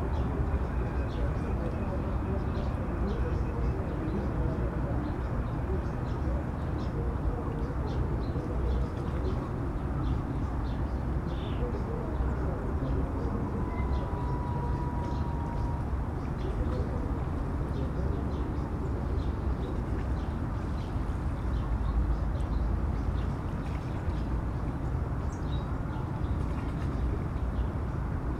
Dnieper river, Dnipro, Ukraine - Dnieper river [Dnipro]
Dnipropetrovsk Oblast, Ukraine, 26 May 2017